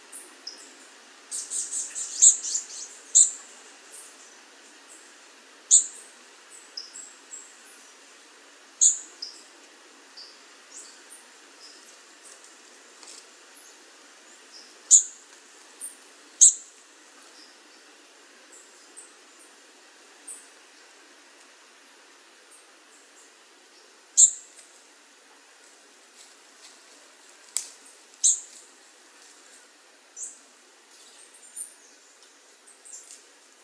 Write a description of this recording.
This soundscape archive is supported by Projeto Café Gato-Mourisco – an eco-activism project host by Associação Embaúba and sponsors by our coffee brand that’s goals offer free biodiversity audiovisual content.